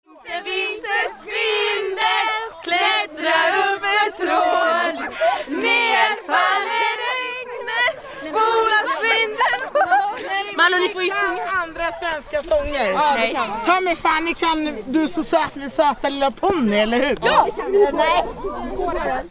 Fisksätra, Svartkärrsstigen - Nuit de Walpurgis - Chants
Les filles chantent.
Stockholms län, Svealand, Sverige, 30 April, 21:44